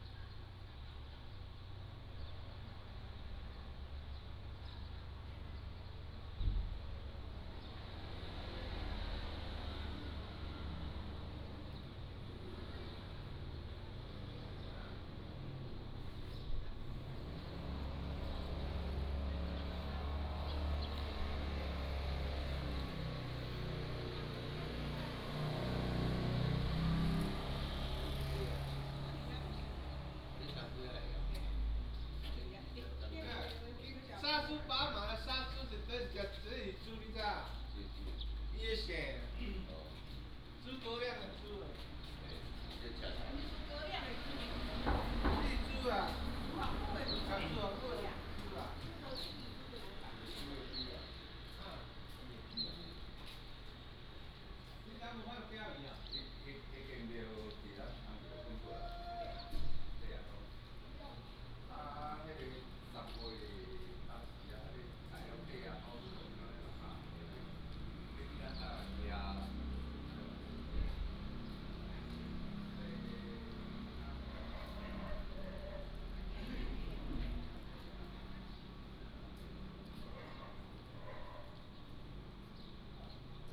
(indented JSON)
{
  "title": "塘岐村, Beigan Township - In the bus station",
  "date": "2014-10-14 07:40:00",
  "description": "In the bus station, A small village in the morning",
  "latitude": "26.22",
  "longitude": "120.00",
  "altitude": "10",
  "timezone": "Asia/Taipei"
}